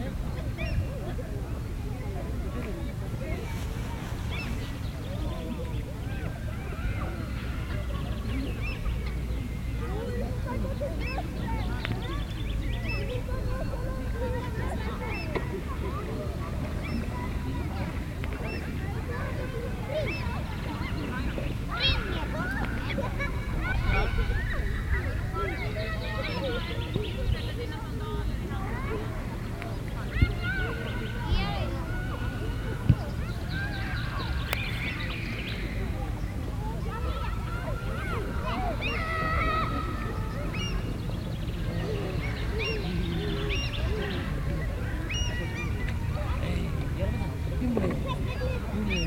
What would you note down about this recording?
Beach scene. Children. Lesser Plover. Gulls. Talking. Reading (page-turning). Motor-boat keynote in background.